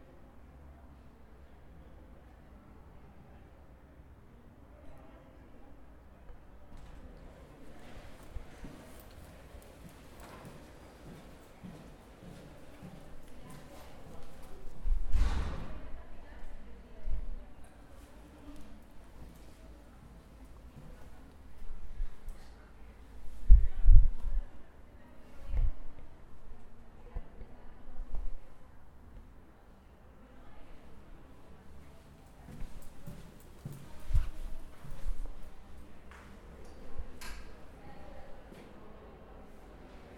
Calle Francisco Tomás y Valiente, Madrid, España - Main entrance of the faculty of Philosophy
It was recorded at the main entrance of the faculty of Philosophy. It can be heard people coming through the main doors, talking while they walk inside outside the faculty.
Recorded with a Zoom H4n.